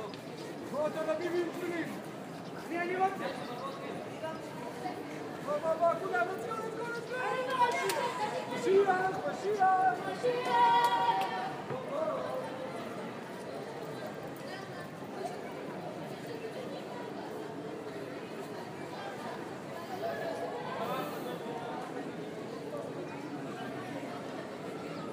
The wailing wall aka western wall aka Ha Kotel - Praying
The Western wall. Where "the divine presence is always present"
22 October 2013, 17:01